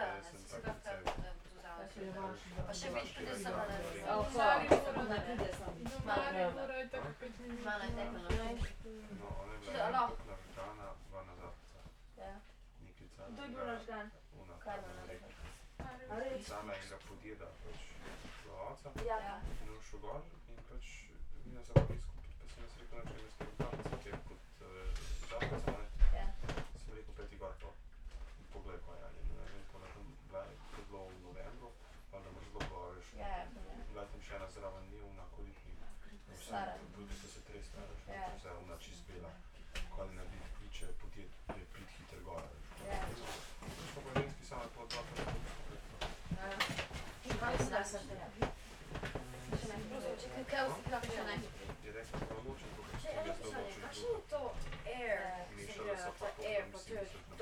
Spielfeld, Strass, Steiermark - waiting for departure
together with a group of youngsters in the train to Maribor, waiting for departure. train staff changes here, the austrians have left and the slowenians take over.
(tech: SD702, Audio Technica BP4025)
Spielfeld, Austria, May 2012